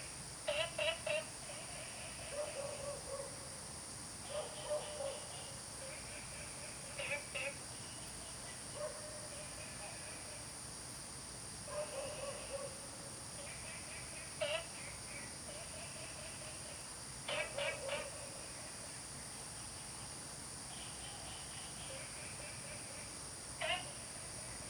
Taomi Ln., Puli Township - Early morning
Early morning, Frog calls, Dogs barking
Zoom H2n MS+XY